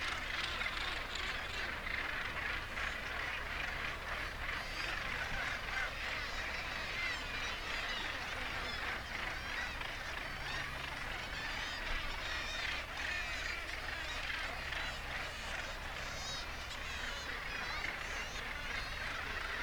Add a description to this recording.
Gannet colony soundscape ... RSPB Bempton Cliffs ... gannet calls and flight calls ... kittiwake calls ... open lavalier mics on T bar on fishing landing net pole ... warm ... sunny morning ...